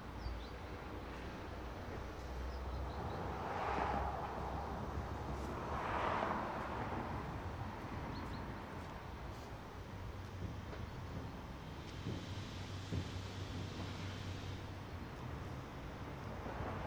Parking przed dworcem, Kilinskiego, Lodz Fabryczna
17 November 2011